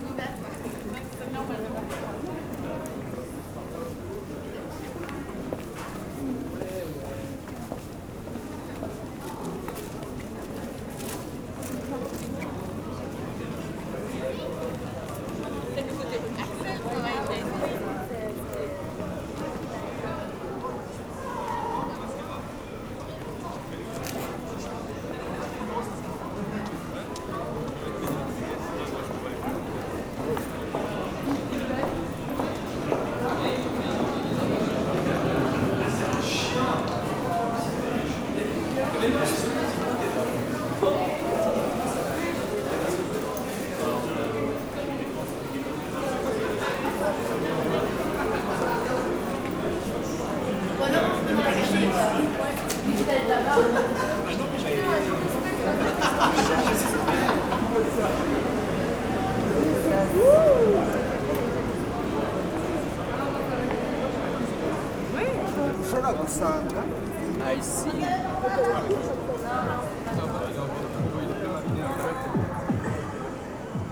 Centre, Ottignies-Louvain-la-Neuve, Belgique - City ambience
Crossing the city between the two main squares.
Ottignies-Louvain-la-Neuve, Belgium